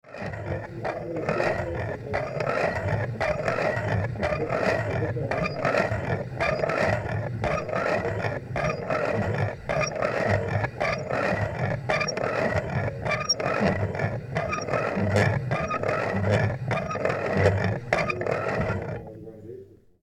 bonifazius, bürknerstr. - coffee mill 1
20.02.2009 17:00 alte manuelle kaffeemühle / old hand-driven
coffee mill